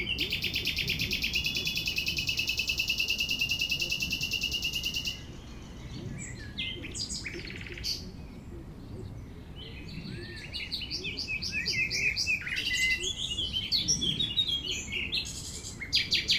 Gießen, Deutschland - Nightingale & Distant Gardeners
Song of a nightingale next to our studio caravan. Right behind the fence, some Schreber gardners were enjoying their evening talk. Recorded with Zoom H4N